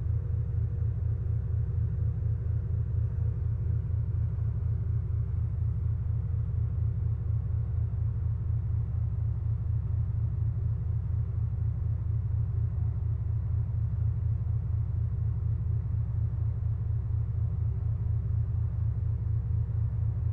ferry, machine sound on deck
recorded on night ferry trelleborg - travemuende, august 10 to 11, 2008.
Trelleborg, Sweden